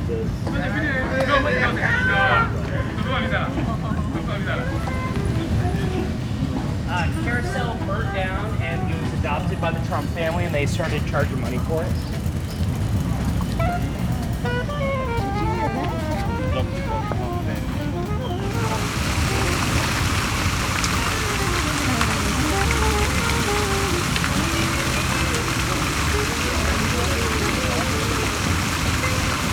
Voices and Entertainers in Central Park, New York, USA - Voices and Street Entertainers
Out of Loebs Boathouse Cafe then a brisk walk through street entertainers while catching glimpses of conversations. Mix Pre 3 + 2 Beyer lavaliers.